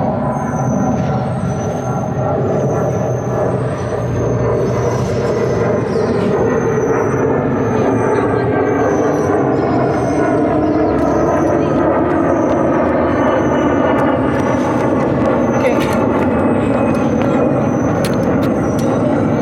Cl., Chía, Cundinamarca, Colombia - Park Day
Park Day. This soundscape was recorded in Chía, near a main road, at 5 o'clock in the afternoon. We can identify sounds mainly of people walking and talking, children playing, people playing sports, swings squeaking, wind and birds. We can also hear a light traffic in the background, and an airplane passing over the place.
24 November